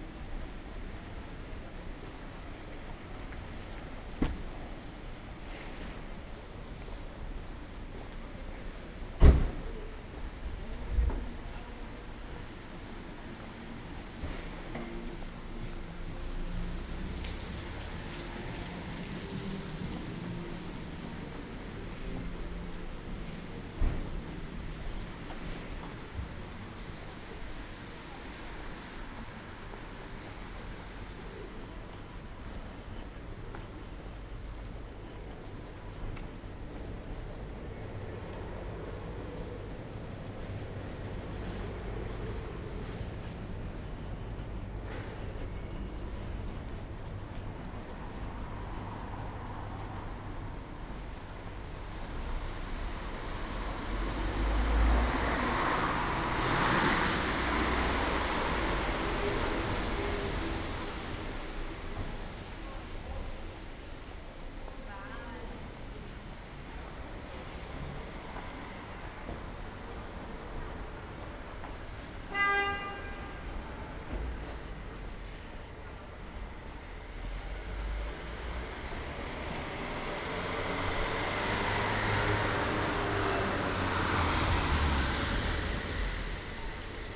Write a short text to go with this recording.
“Walking in a rainy day at the time of covid19” Soundwalk, Chapter XXIV of Ascolto il tuo cuore, città. I listen to your heart, city. Friday March 27 2020. Walk to Porta Nuova railway station and back, San Salvario district, seventeen days after emergency disposition due to the epidemic of COVID19. Start at 11:25 a.m., end at h. 00:01 p.m. duration of recording 36’11”, The entire path is associated with a synchronized GPS track recorded in the (kml, gpx, kmz) files downloadable here: